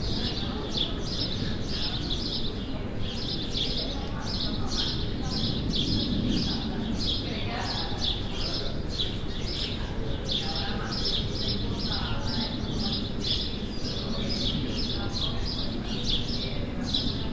Rue de Périgord, Toulouse, France - in the library courtyard
atmosphere, bird, people walk, bells
Captation : ZoomH6